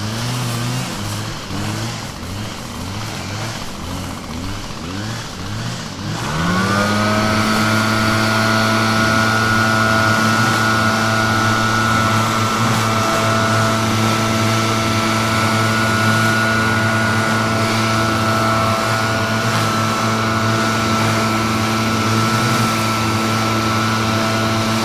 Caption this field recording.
Leaf blowers in the park... what a useless job. Zoom H2 recorder internal mics.